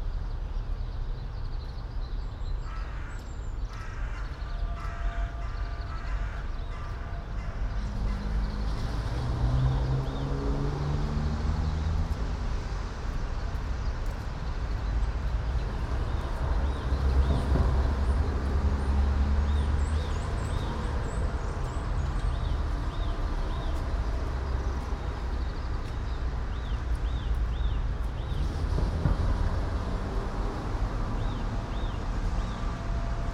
all the mornings of the ... - mar 8 2013 fri
8 March, ~08:00